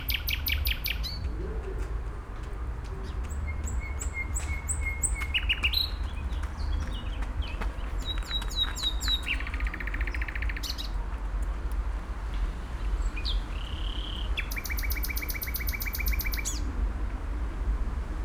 Hasenheide, Columbiadamm, Berlin - Nightingale, traffic and funfair noise
Hasenheide park, Berlin, this nightingale could not be disturbed by the approaching recordist... she continued singing further sounds of the near by funfair, distant traffic, etc.
(Sony PCM D50, DPA4060)